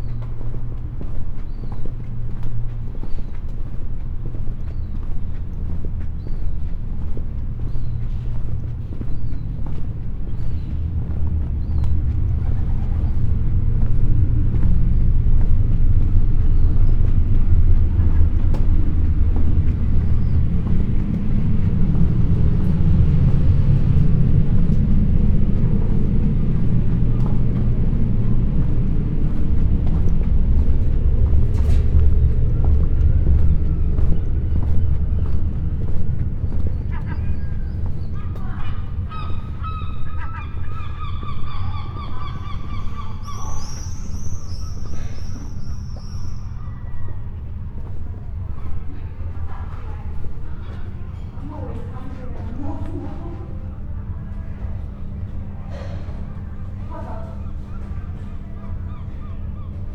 Night Walk, Aldeburgh, Suffolk, UK - Walk
Beginning and ending near The White Hart pub this walk at 10pm records the end of a sunny day in a town now quiet. Some voices, snatches of a football game on TV through open windows, gulls and the occasional car.
8 July 2021, ~22:00